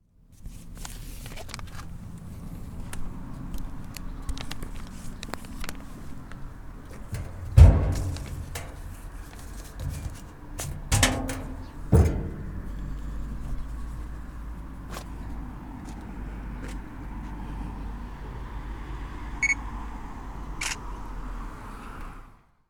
Sollefteå, Sverige - Posting a letter
On the World Listening Day of 2012 - 18th july 2012. From a soundwalk in Sollefteå, Sweden. Dropping a letter in the mailbox opposite the beer brewery in Sollefteå, then taking a picture of the same. WLD